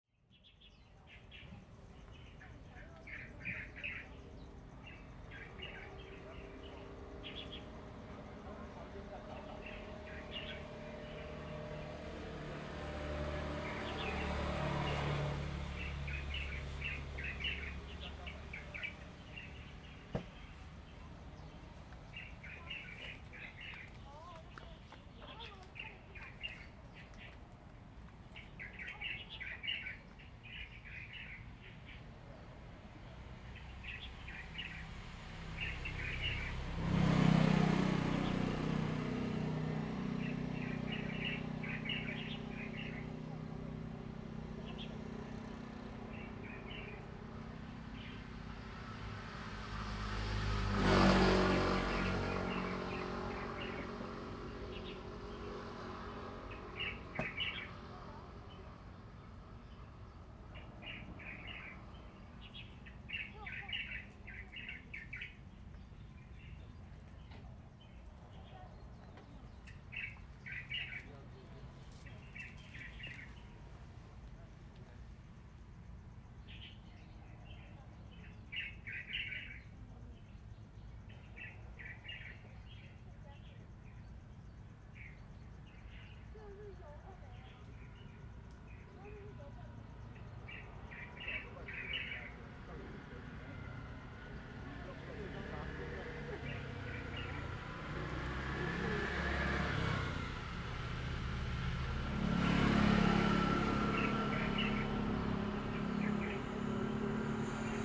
Hsiao Liouciou Island, Pingtung County - Birds singing
In the side of the road, Birds singing, Traffic Sound
Zoom H2n MS +XY
Pingtung County, Taiwan, 1 November, 10:53